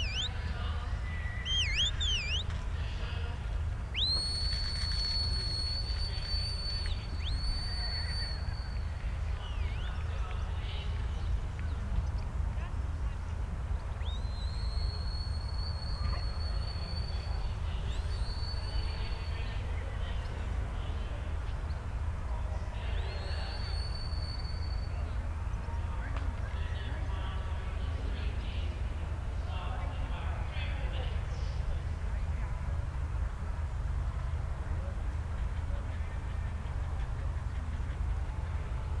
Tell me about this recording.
Sheepdog trials ... open lavaliers clipped to sandwich box ... plenty of background noise ...